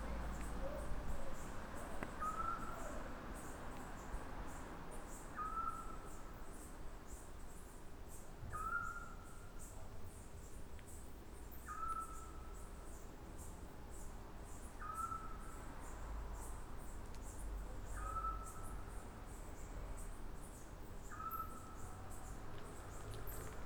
night sonic scape with small owl, crickets, bats, cars
Trieste, Italy, 7 September 2013